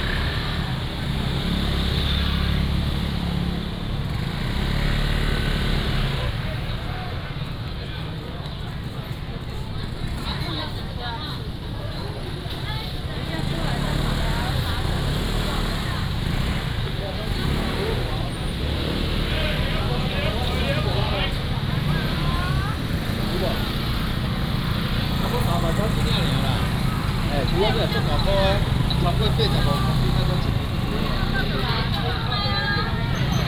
Aiwu Rd., Hemei Township - Walking through the traditional market
Walking through the traditional market, Traffic sound, Vendors
Changhua County, Taiwan